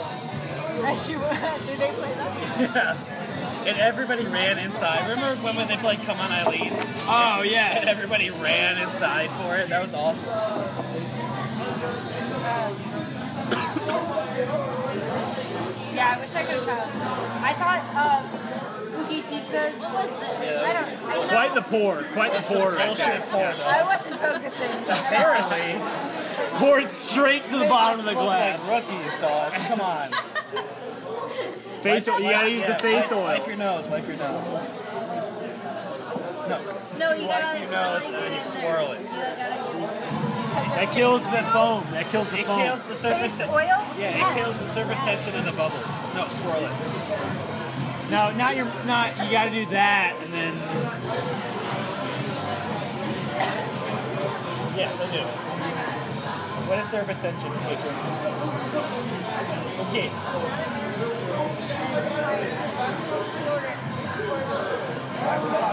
Boone, NC, USA - Boone Saloon
A recording from 'Taco Tuesday' at Boone Saloon.